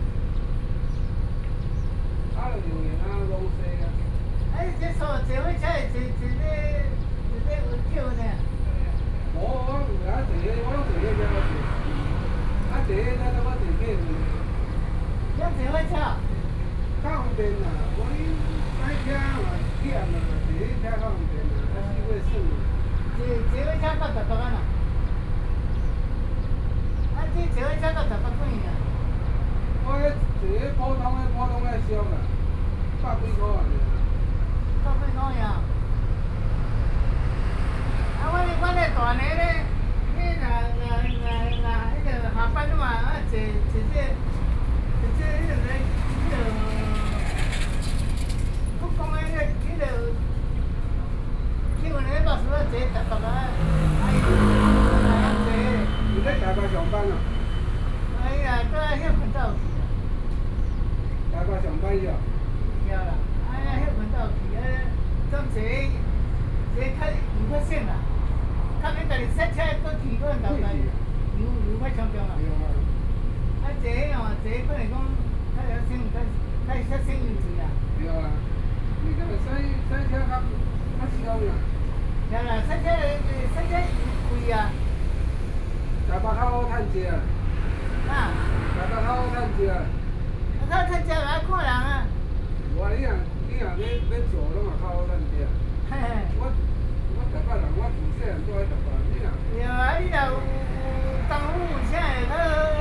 Nanning Rd., 蘇澳鎮南安里 - In the bus station
Next to the pier, In the bus station, Traffic Sound, Hot weather
28 July 2014, 14:29